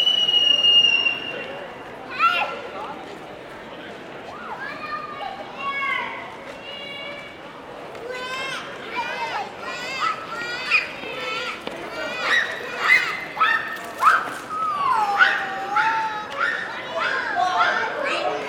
Arthur St, Belfast, UK - Arthur Square
Recording of kids yelling/playing/running around the sculpture, different groups chattering, birds flying around, a group of youths whistling and chanting, dog walking by.